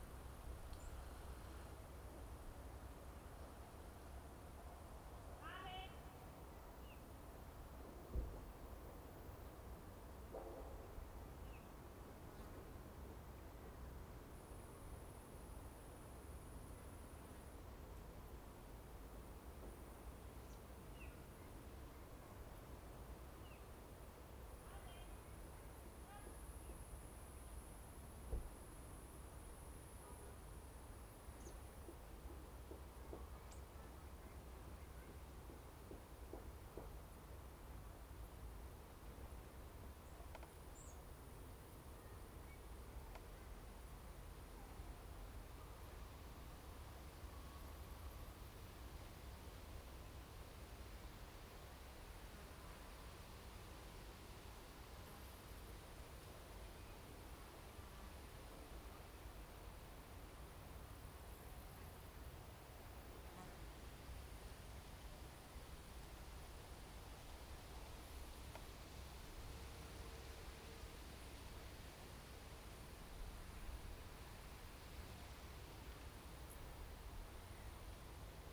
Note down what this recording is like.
Best listening on headphones on low volume. A relaxed atmosphere with soft breezes, birds, soft bicycle tour, wasp, voice and distant traffic drones. A soothing listening experience. This location is beneath a tourist attraction in Bad Berka "Paulinenturm".The Paulinenturm is an observation tower of the city of Bad Berka. It is located on the 416 metre high Adelsberg on the eastern edge of the city, about 150 metres above the valley bottom of the Ilm. Recording gear: Zoom F4 Field Recorder, LOM MikroUsi Pro.